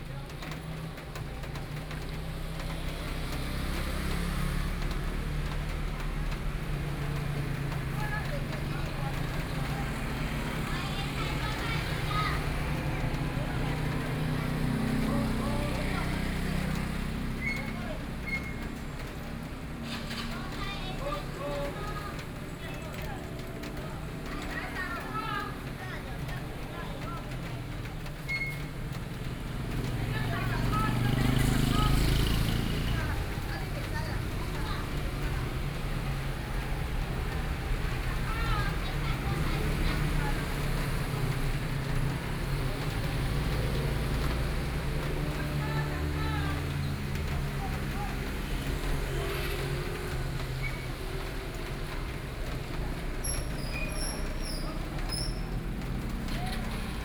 Kangle Rd., Yilan City - Selling vegetables sound
Rainy Day, At the crossroads, There are three vegetable vendors selling their voice, Traffic Noise, Binaural recordings, Zoom H4n+ Soundman OKM II